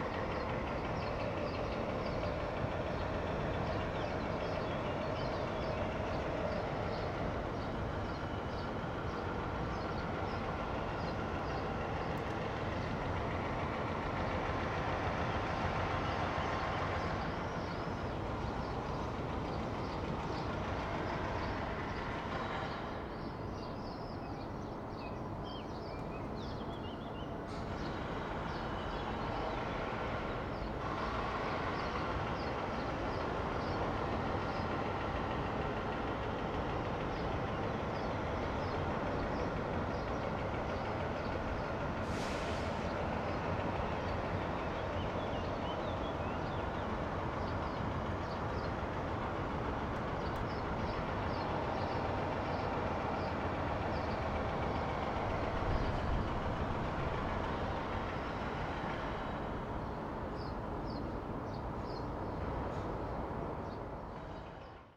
lisbon, travessa do salitre - lisboa plaza hotel, terrace
terrace of the lisboa plaza hotel. lisbon is full of constructuon sets.
Lisbon, Portugal, 1 July